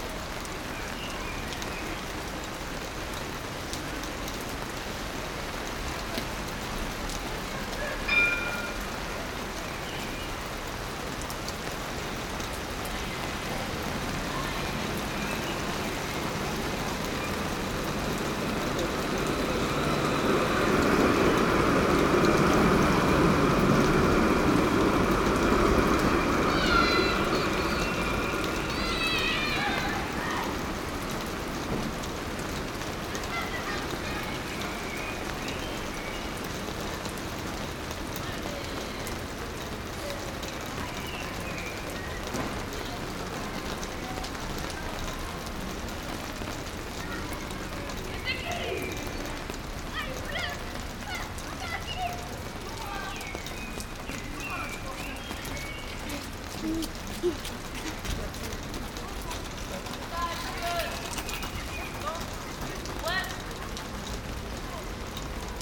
Liberation, Nice, France - Binaural rain next to tram line
Standing under a plastic cover sheltering from the rain. Trams pass, people walk by, a bird sings and dogs bark.
Recorded with 2 Rode Lavalier mics attached to my headphones to give an (imperfect) binaural array, going into a Zoom H4n.
26 February, 17:13